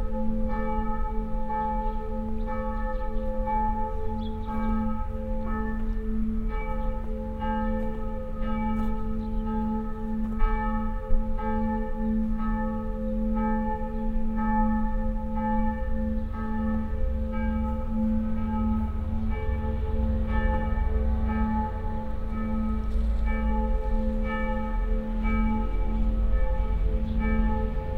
In the early afternoon, the church bells of the old village church recorded in the church surrounding graveyard in winter.
Unfortunately some wind disturbances.
international soundscapes - topographic field recordings and social ambiences